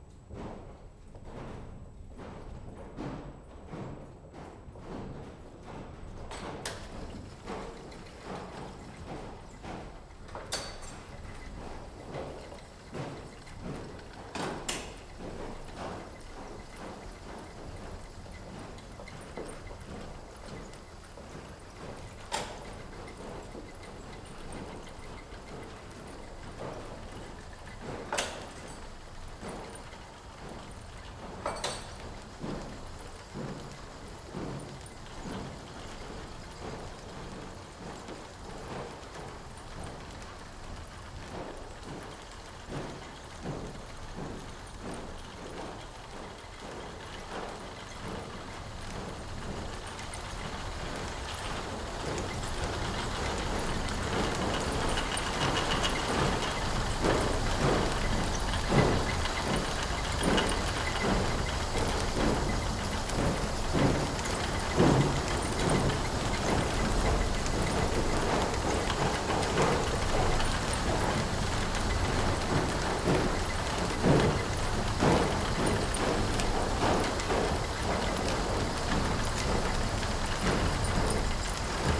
ratingen, cromforder allee, cromford museum
water frame - mehrteilige webanlage, sukzessive ein und ausgeschaltet - im industrie museum cromford - im hintergrund der zentrale wasserantrieb
soundmap nrw
topographic field recordings and social ambiences